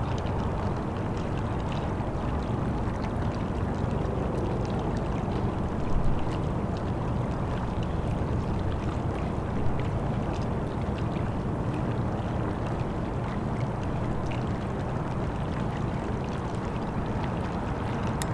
Under Langevin Bridge, Calgary, AB, Canada - Bow River
The river was partially frozen so like the idiot i am, i sat on the rocks and placed the recorder on the ice. Weird night river. Also, I thought someone was behind me the entire time I was there, but there were only geese.
Zoom H4N Recorder